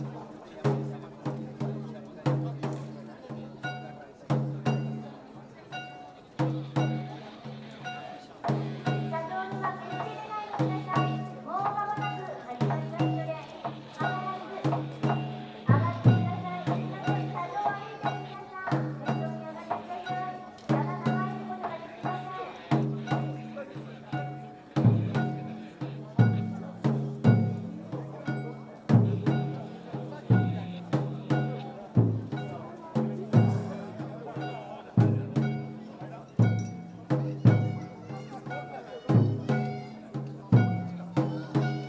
Wakaba, Yahatanishi Ward, Kitakyushu, Fukuoka, Japan - Aioichou Summer Festival Opening
Festival floats are raced though crowds of spectators.
福岡県, 日本, 1 August 2018